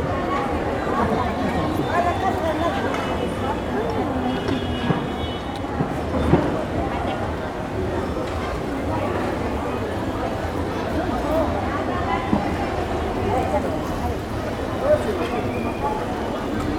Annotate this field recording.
Caminhada pelo Mercado Indígena de Saquisili, Equador. Hike through the Indigenous Market of Saquisili, Ecuador. Gravador Tascam DR-05. Tascam recorder DR-05.